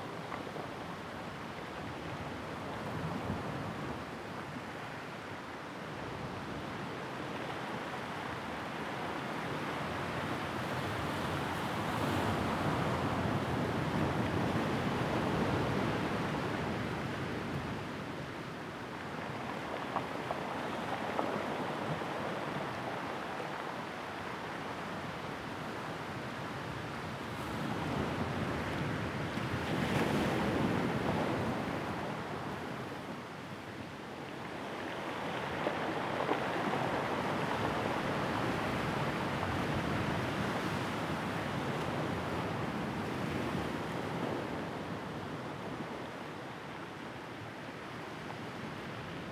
Madeira, Coast below ER101 /Camino dos Poios, Portugal - waves on pebble-beach2
Recorded with a Sound Devices 702 field recorder and a modified Crown - SASS setup incorporating two Sennheiser mkh 20 microphones.
3 September 2011, 17:59